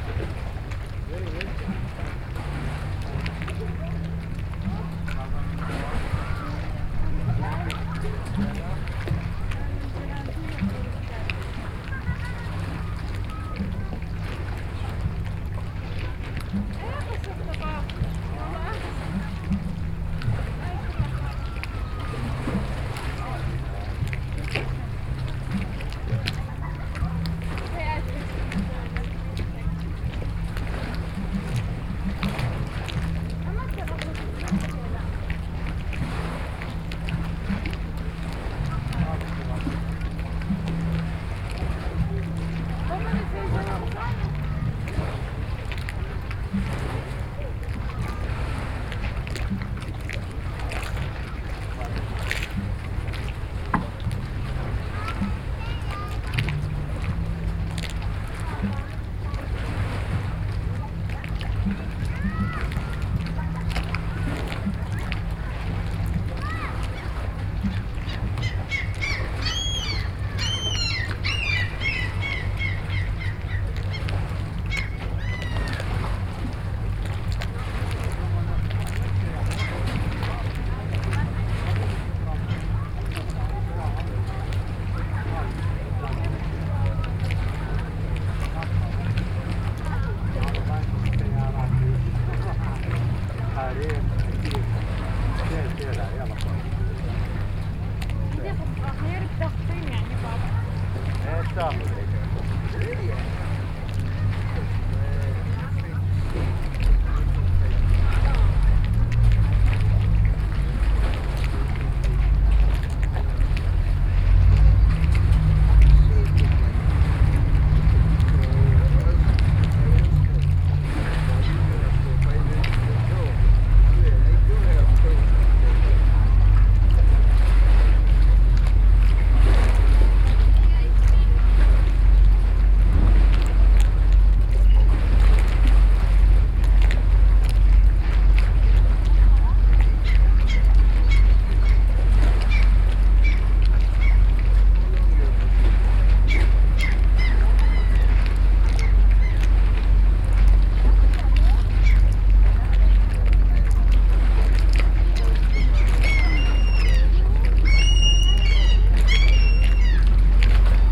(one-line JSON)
{"title": "Oslo, Bygdoy, Jetty", "date": "2011-06-04 17:33:00", "description": "Norway, Oslo, Jetty, boat, water, binaural", "latitude": "59.90", "longitude": "10.70", "altitude": "3", "timezone": "Europe/Oslo"}